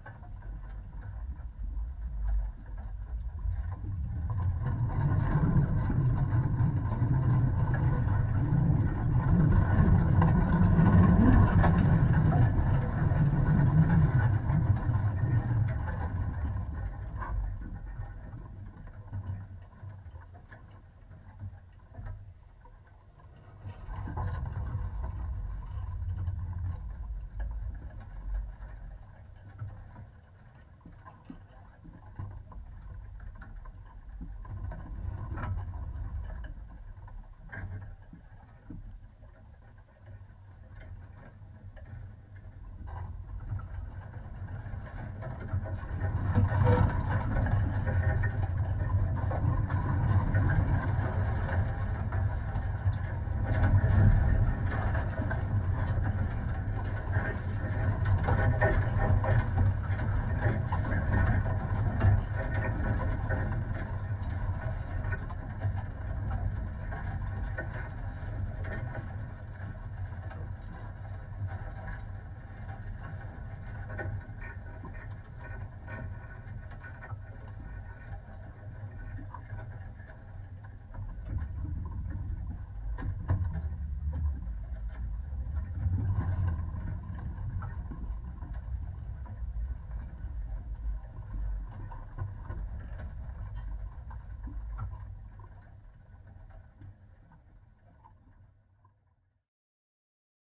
Utena, Lithuania, a piece of rusty wire
remains of the fence-wire surrounding the abandoned soviet era basin. contact mics